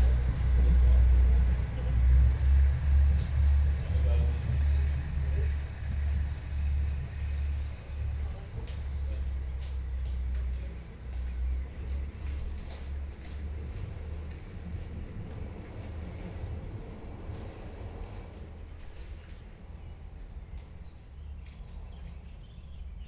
Common countryside birds_Train arrival and departure_Masterstation working on the bell
Bugeat, France, 14 April